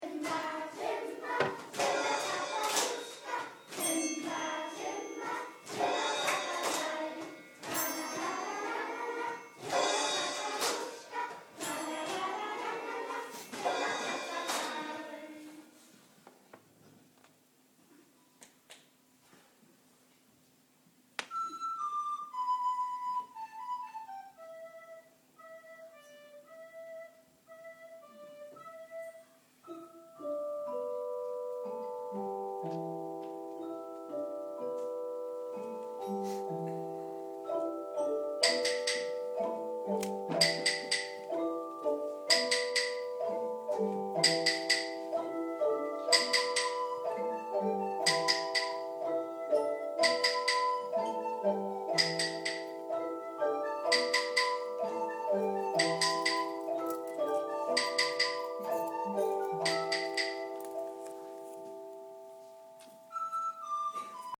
Ein musikalischer Abend der Schüler der Blücherschule Wiesbaden.
Wiesbaden, Germany